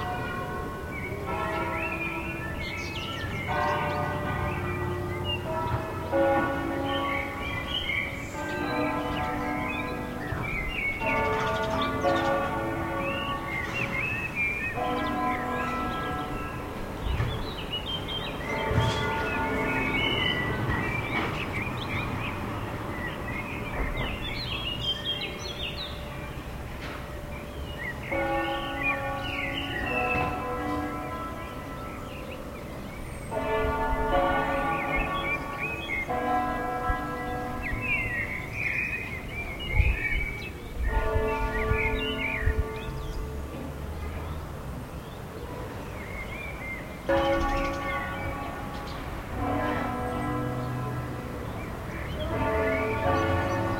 Campane e canti di uccelli nel centro di Parabiago
Parabiago Milan, Italy